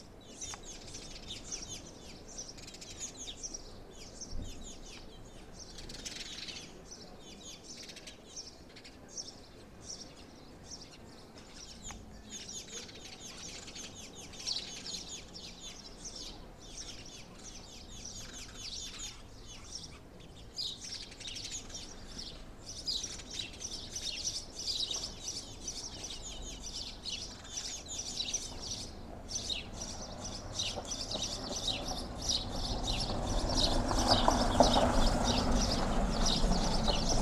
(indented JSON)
{
  "title": "The Fortress of Frangokastello, Crete",
  "date": "2019-05-03 12:10:00",
  "description": "at the fortress",
  "latitude": "35.18",
  "longitude": "24.23",
  "altitude": "12",
  "timezone": "Europe/Athens"
}